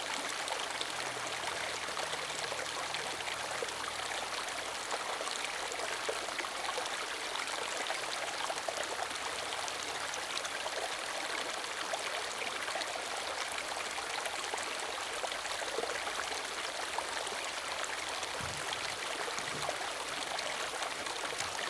中路坑溪, 桃米里 Puli Township - Stream sound
Stream sound
Zoom H2n Spatial audio
Puli Township, 投68鄉道73號, 13 July 2016